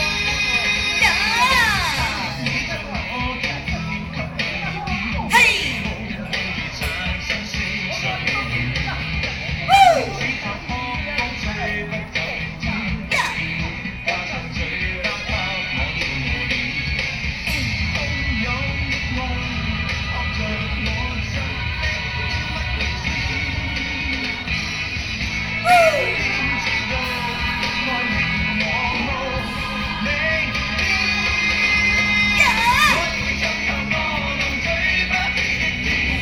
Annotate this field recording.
Taiwanese opera and Taiwan Folk temple activities, Sony PCM D50 + Soundman OKM II